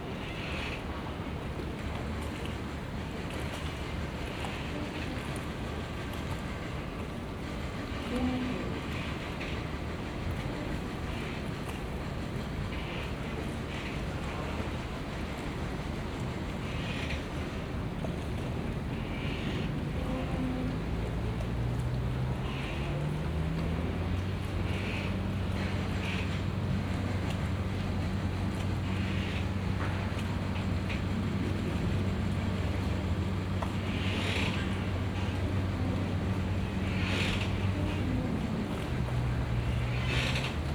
Nan'an, Chongqing, Chiny - Chongqing City Orchestra
Chongqing City Orchestra. River Yangtze, barge, planes, construction site and many many sounds.
Binaural - Olympus LS-100